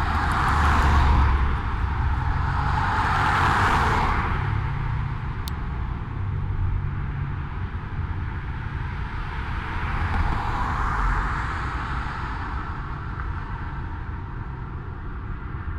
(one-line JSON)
{"title": "Rose Garden, Allentown, PA, USA - Liberty & Ott", "date": "2014-12-05 08:37:00", "description": "I recorded this on the corner of Liberty st and Ott st with a Sony.", "latitude": "40.60", "longitude": "-75.52", "altitude": "115", "timezone": "America/New_York"}